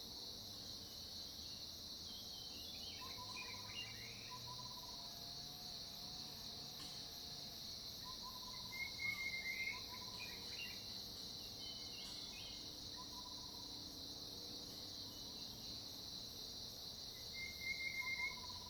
種瓜路, 埔里鎮桃米里 - Morning in the mountain
Early morning, Bird calls, Morning in the mountain
Zoom H2n MS+XY